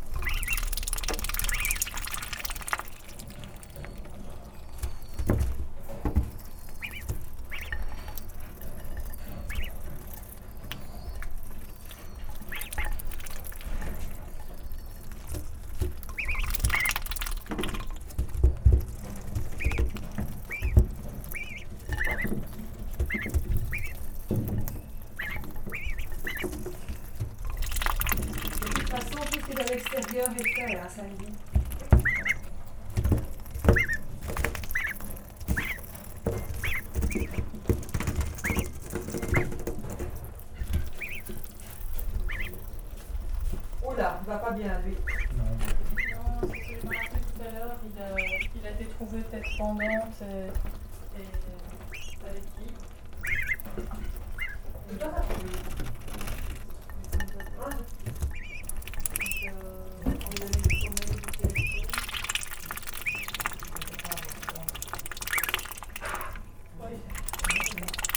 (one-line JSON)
{"title": "Ottignies-Louvain-la-Neuve, Belgique - Birdsbay, hospital for animals", "date": "2016-08-08 19:45:00", "description": "Birdsbay is a center where is given revalidation to wildlife. It's an hospital for animals. This moment is when we give food to the ducklings. These scoundrels are very dirty and disseminated tons of \"Water Lens\" on the recorder !", "latitude": "50.66", "longitude": "4.58", "altitude": "78", "timezone": "Europe/Brussels"}